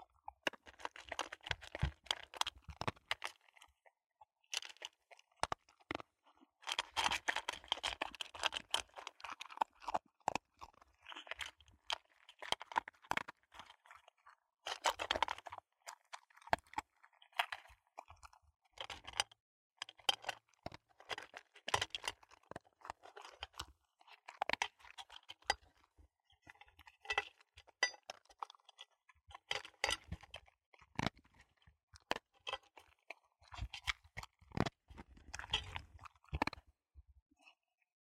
{"title": "Morgans Run Ct NE, Buford, GA, USA - A dog eating dinner.", "date": "2020-02-29 18:30:00", "description": "This is an audio recording of a two year old dog, a corgi named Otto, eating dinner. He is eating out of a metal bowl on hardwood flooring.", "latitude": "34.05", "longitude": "-83.94", "altitude": "362", "timezone": "America/New_York"}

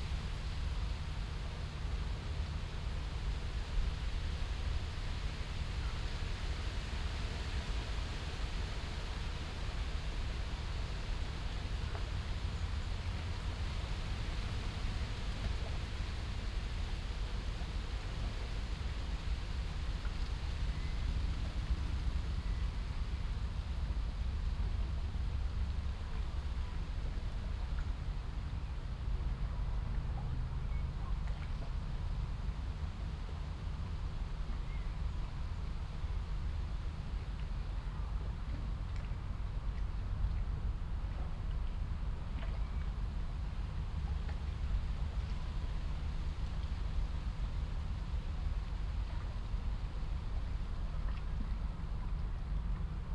{"title": "Kiel Canal Exit, Kiel, Deutschland - Kiel Canal Exit", "date": "2017-05-24 19:00:00", "description": "Exit of the Kiel Canal in Kiel, a passing ship, wind, rustling leaves, small splashing waves, constant low frequency rumble from ship engines, a ship horn (@4:40), gulls, geese and some oystercatcher (@13:10) Binaural recording, Zoom F4 recorder, Soundman OKM II Klassik microphone with wind protection", "latitude": "54.36", "longitude": "10.15", "altitude": "1", "timezone": "Europe/Berlin"}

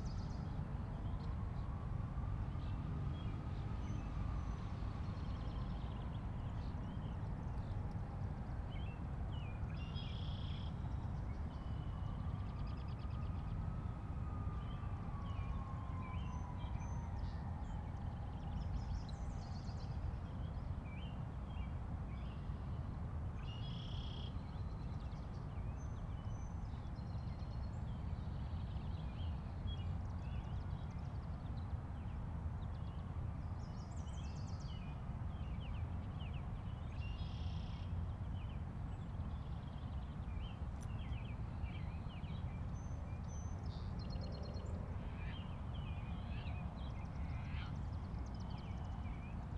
{"title": "Washington Park, South Doctor Martin Luther King Junior Drive, Chicago, IL, USA - Summer Walk 2", "date": "2011-06-18 14:15:00", "description": "Recorded with Zoom H2. Recording of interactive soundwalk.", "latitude": "41.79", "longitude": "-87.61", "altitude": "184", "timezone": "America/Chicago"}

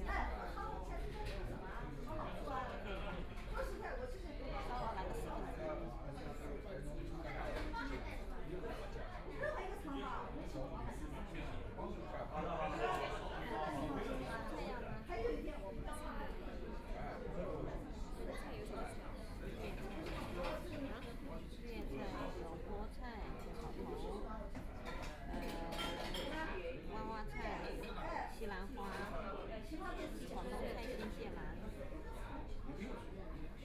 {"title": "Yangpu District, Shanghai - In the restaurant", "date": "2013-11-20 19:30:00", "description": "In the restaurant, A la carte, Binaural recording, Zoom H6+ Soundman OKM II", "latitude": "31.30", "longitude": "121.52", "altitude": "10", "timezone": "Asia/Shanghai"}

